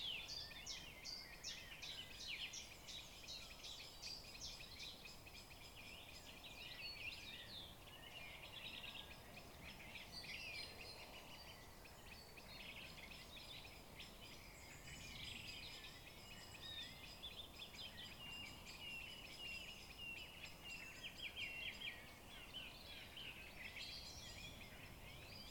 Exeter, UK - Hill Crest Devon Wildland rewilding site
This recording was made using a Zoom H4N. The recorder was positioned on the path at the top of the valley of one of the core rewilding sites of Devon Wildland. This recording is part of a series of recordings that will be taken across the landscape, Devon Wildland, to highlight the soundscape that wildlife experience and highlight any potential soundscape barriers that may effect connectivity for wildlife.